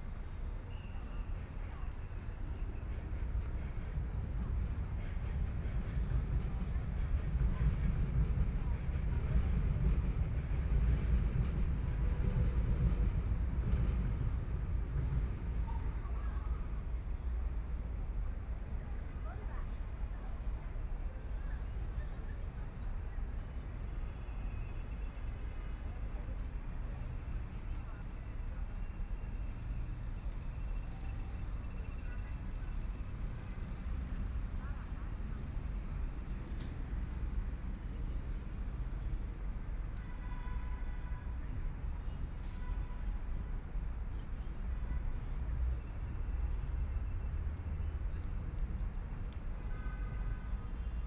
Hongkou District, Shanghai - Environmental sounds, Park
Traffic Sound, The sound traveling through the subway, Trafficking flute master, 're Playing flute sounds
Flute sounds, Zoom H6+ Soundman OKM II
Hongkou, Shanghai, China, 23 November 2013